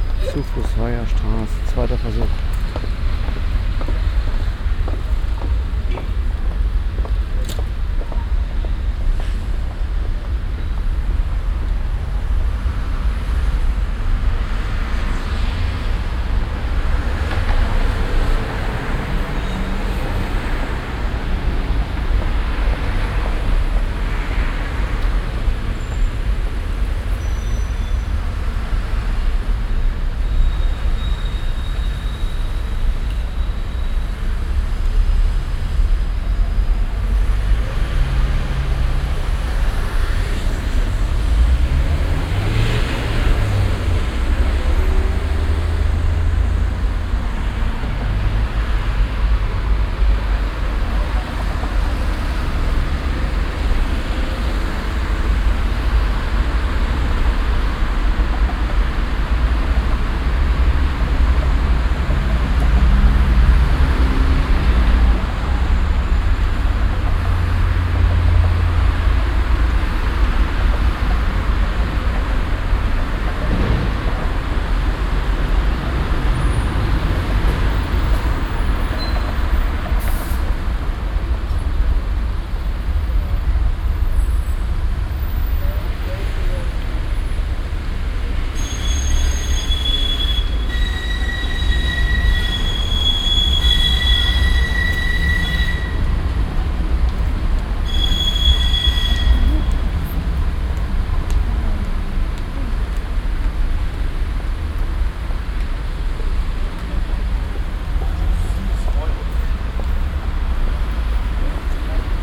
cologne, barbarossaplatz, verkehrszufluss neue weyerstrasse - cologne, barbarossaplatz, verkehrszufluss neue weyerstrasse 02
strassen- und bahnverkehr am stärksten befahrenen platz von köln - aufnahme: nachmittags
soundmap nrw: